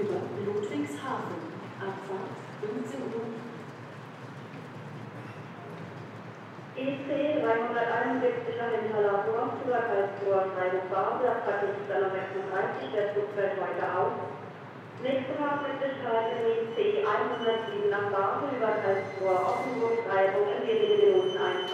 Mannheim Hbf, Willy-Brandt-Platz, Mannheim, Deutschland - main station trains delay and cancelled
after the storm sabine the rail traffic in germany collapsed for some hours, here a recording of the main station mannheim with corresponding announcements.
zoom h6